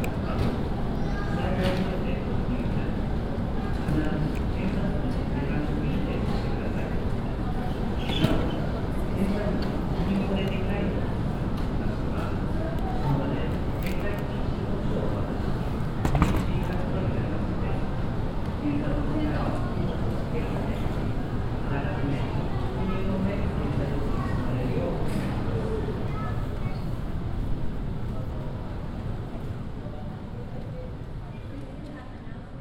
tokyo, airport, luggage belt
At the arrival zone. The sound of the luggage belt and passengers waiting for their suitcases.
international city scapes - topographic field recordings and social ambiences
Japan, 8 July 2011, 18:49